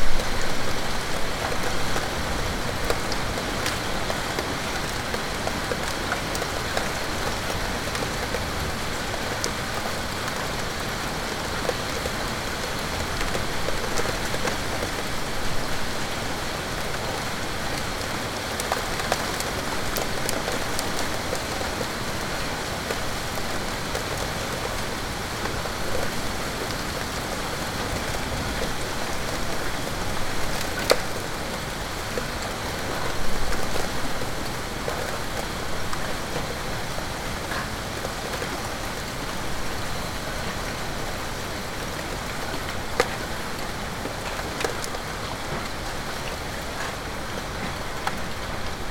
Dekerta, Kraków, Poland - (826 AB) Storm with hailstone
Recording of a heavy storm with hailstone and thunders.
AB stereo recording (17cm) made with Sennheiser MKH 8020 on Sound Devices Mix-Pre6 II.
województwo małopolskie, Polska, 9 July 2021, 13:50